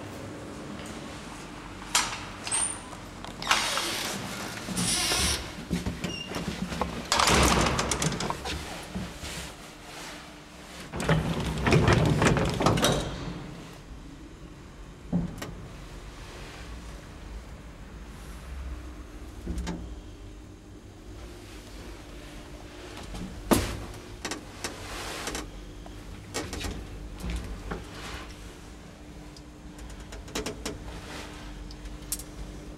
we return, lift
lift, 21/03/2009